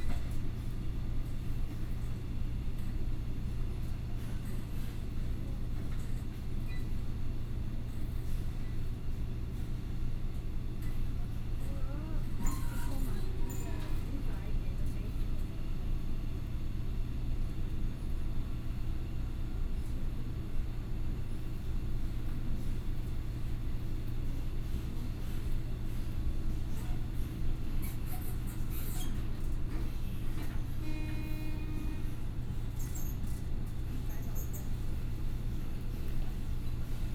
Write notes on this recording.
In the compartment, from Liujia Station to Zhuzhong Station, Train message broadcast